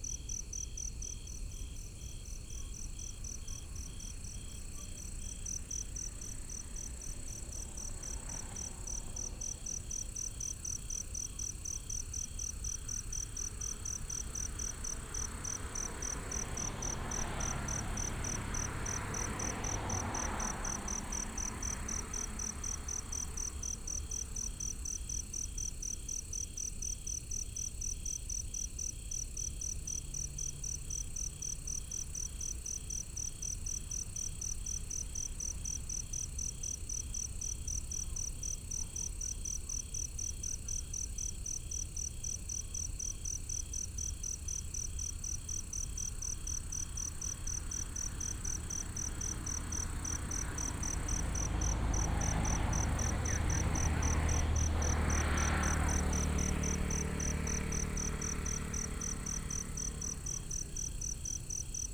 都會園路, Xitun Dist., Taichung City - Insects
Insects sound, Traffic sound, Binaural recordings, Sony PCM D100+ Soundman OKM II
Xitun District, 都會園路, 9 October 2017